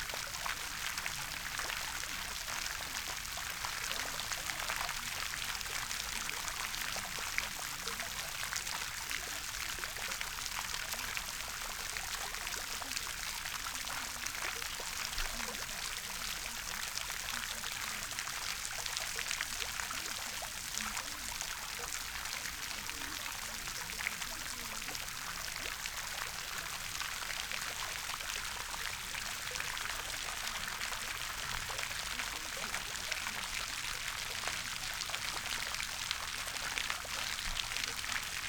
fountainette again ... SASS on tripod ... movement of the plume of water by a gentle wind ...
Malton, UK, 2 August 2019